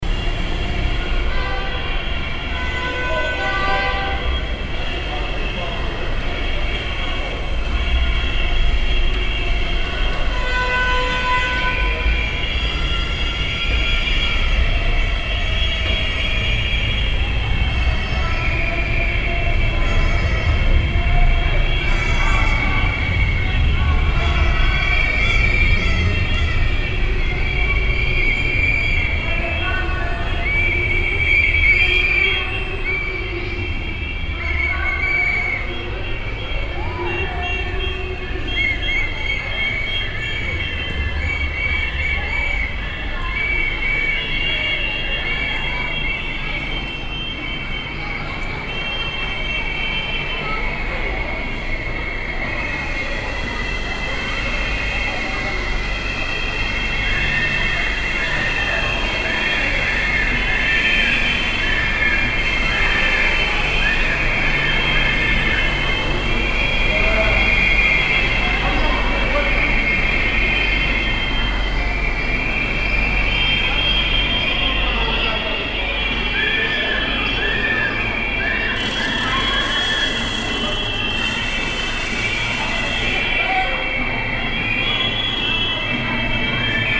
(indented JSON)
{
  "title": "cologne, tunnel, trankgasse - cologne, tunnel, trankgasse, demonstration parade of kindergarden caretaker",
  "date": "2009-06-19 12:15:00",
  "description": "in the tunnel - demonstration parade of kindergarden care taker\nsoundmap d: social ambiences/ listen to the people in & outdoor topographic field recordings",
  "latitude": "50.94",
  "longitude": "6.96",
  "altitude": "55",
  "timezone": "Europe/Berlin"
}